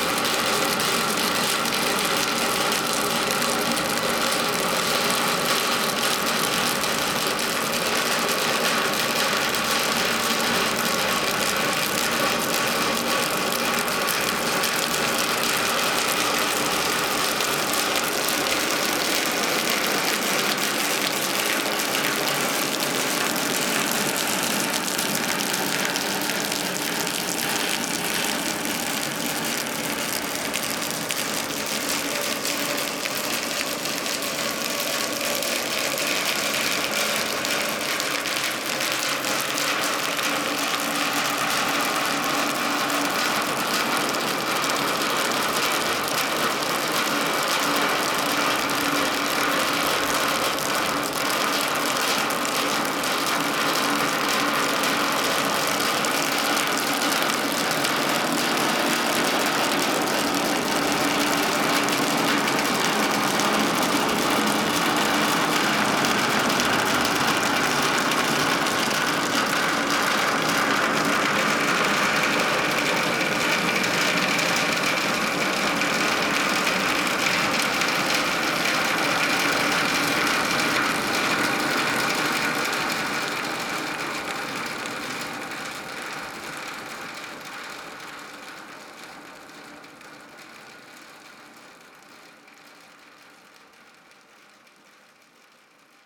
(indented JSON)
{
  "title": "Villard-sur-Doron, France - Fonte des neiges",
  "date": "2006-05-03 16:30:00",
  "description": "Chute d'eau de fonte des neiges sur une toiture en tôle d'acier, au restaurant du mont Bisanne.",
  "latitude": "45.75",
  "longitude": "6.51",
  "altitude": "1931",
  "timezone": "Europe/Paris"
}